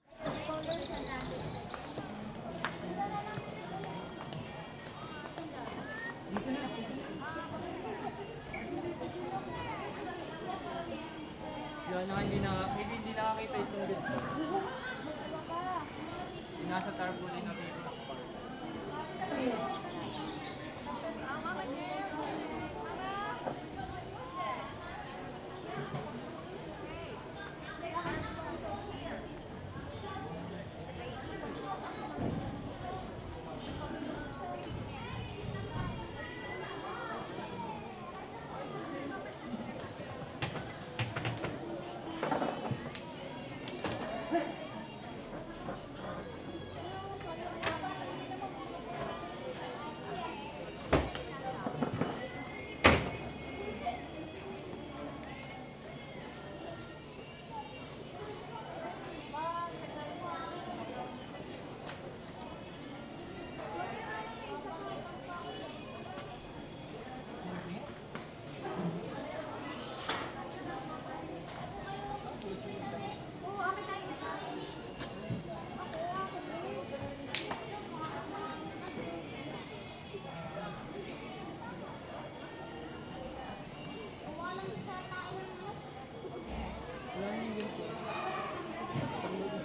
Calabuso North, Tagaytay, Cavite, Filippinerna - Tagaytay People´s Park in the Sky #2
Tourists, swallows and market vendors at the unfinished mansion (palace in the Sky) from the Marcos period in the eighties, now a tourist attraction with widespread views from the top of the inactive stratovulcano Mount Sungay (or Mount Gonzales). Recorded in the stairway between 1st floor and ground floor. WLD 2016